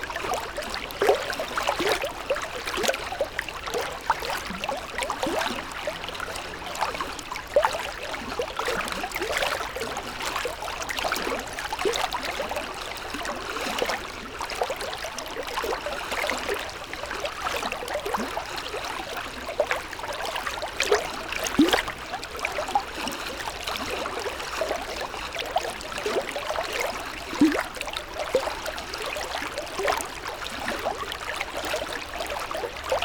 river Drava, Loka, Slovenia - soft white bubbles